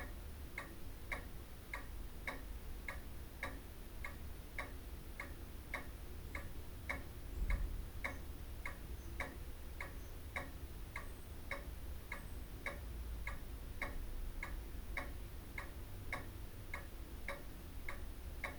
Meadow Way, Didcot, UK - front room ambience ...
front room ambience ... recorded with olympus ls 14 integral mics ... a pendulum wall clock ticks on ... the heartbeat and background to family life over many years ... dad passed away with a covid related illness in dec 2020 ... he was 96 ... registered blind and had vascular dementia ... no sadness ... he loved and was loved in return ... heres to babs and jack ... bless you folks ... my last visit to the house ...